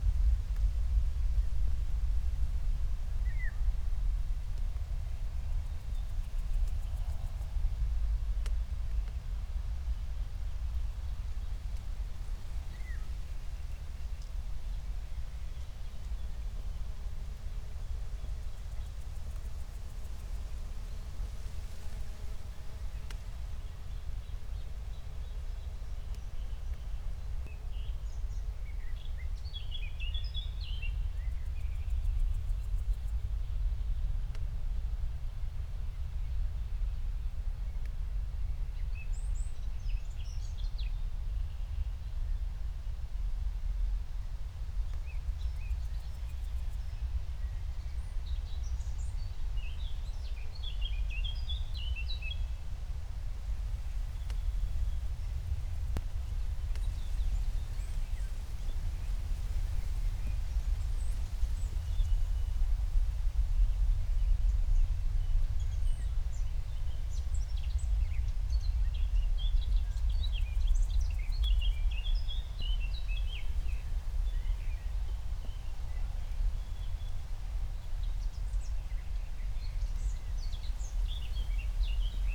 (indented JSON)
{
  "title": "Berlin, Buch, Mittelbruch / Torfstich - wetland, nature reserve",
  "date": "2020-06-19 13:00:00",
  "description": "13:00 Berlin, Buch, Mittelbruch / Torfstich 1",
  "latitude": "52.65",
  "longitude": "13.50",
  "altitude": "55",
  "timezone": "Europe/Berlin"
}